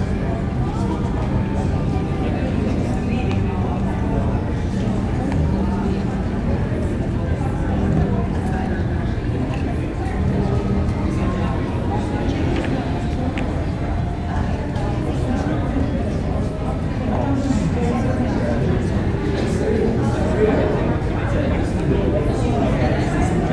The Lobby of a Liner, North Atlantic Ocean. - Lobby
The main lobby of a liner, the pursers office, the tour office, double staircase two decks high, ceiling four decks high, grand, smart and the social meeting place on the ship. The casino, shops without price tags and the ship's bell are present. You are greeted here when you board the ship and directed to the lifts just along the main concourse. Sometimes a string quartet, pianist or harpist plays. A jumble sale is held on the concourse every week and is packed.
MixPre 3 with 2 x Beyer Lavaliers.